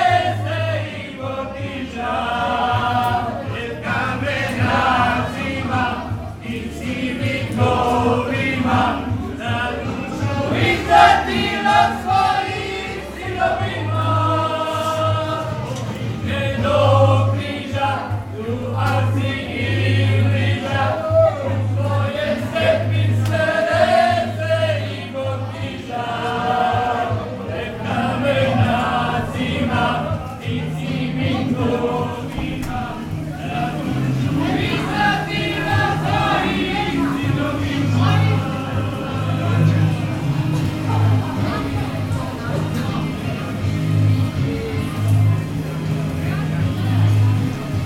Zadar, Cafe Toni, Kroatien - Independence Day
The small pub in the historic center of Zadar was crowded with people singing and celebrating the Independence Day of Croatia.
Zadar, Croatia, 8 October